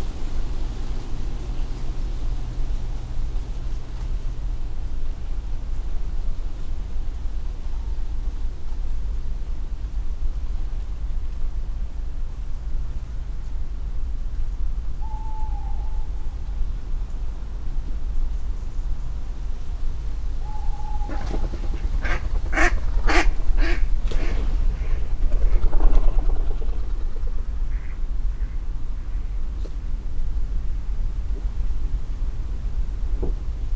Pergola, Malvern, UK - Owl Apple Ducks
Early morning after a breezy night owls call, apples fall, ducks arrive and leave and I come to recover the recorder.
England, United Kingdom, 10 October, ~06:00